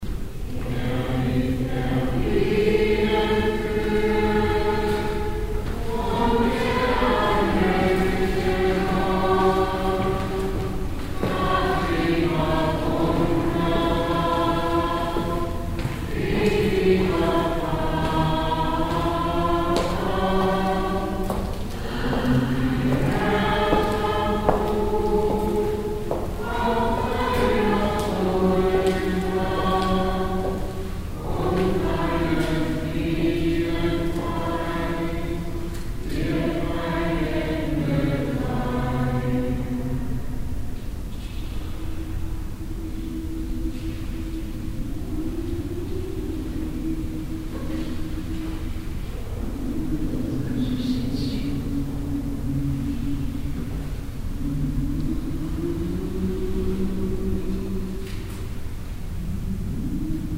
velbert neviges, mariendom. gläubigengesänge - velbert neviges, mariendom. glaeubigengesaenge
gesaenge glaeubiger in der marienkirche, mittags, frühjahr 07
project: social ambiences/ listen to the people - in & outdoor nearfield recordings